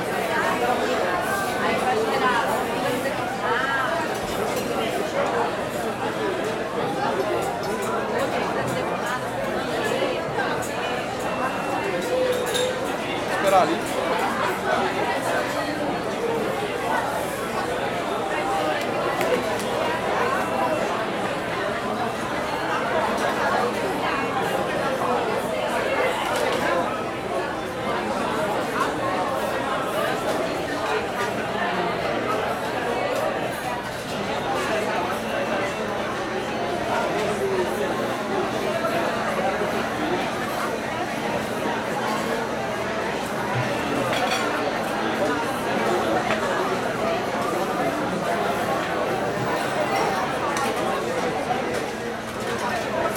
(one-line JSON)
{"title": "Restaurant Bella Paulista - Restaurant Atmosphere (crowded)", "date": "2018-03-17 00:30:00", "description": "Inside a crowded restaurant in Sao Paulo (Brazil) around midnight. People talking, some noise of the machines in background.\nRecorded in Bella Paulista, on 16th of March.\nRecording by a MS Schoeps CCM41+CCM8 setup on a Cinela Suspension+windscreen.\nRecorded on a Sound Devices 633\nSound Ref: MS BR-180316T07", "latitude": "-23.56", "longitude": "-46.66", "altitude": "834", "timezone": "America/Sao_Paulo"}